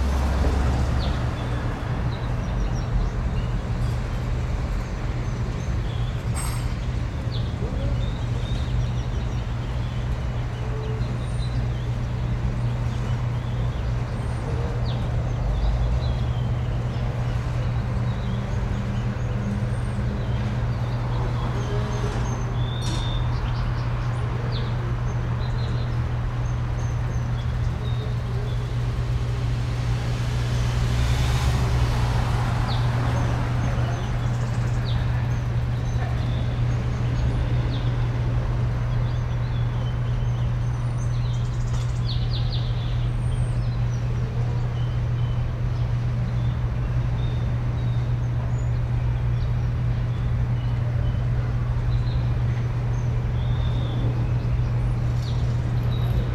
Downstairs is a repair shop, cars passing by, birds, airplanes... Nice spot! Recorded with a Perception 220, to a Fast-Track Pro on Logic.